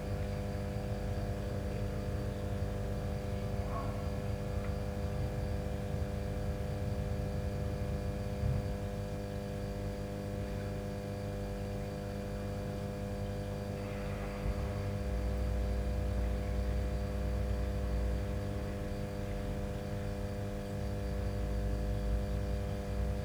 Schulstr., Niedertiefenbach, Deutschland - night, transformer startion
hum of a transformer station at night
(Sony PCM D50, Primo EM172)